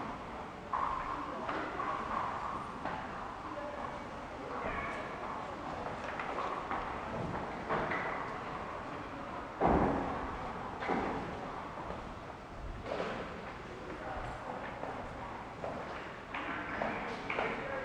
Inside a horse stable. The sound of the horse hooves on the stone pavement and their snorting. In the distance some horse keepers talking while cleaning the floor and a stable.
Hosingen, Pferdestall
In einem Pferdestall. Das Geräusch von Pferdehufen auf dem Steinboden und ihr Schnauben. In der Ferne einige Pferdepfleger, die sich unterhalten, während sie den Boden und einen Stall sauber machen.
Hosingen, étable à chevaux
A l’intérieur d’une étable à chevaux. Le bruit des sabots des chevaux sur le sol en pierre et leur hennissement. Un peu plus loin, des palefreniers discutent en nettoyant le sol et une étable.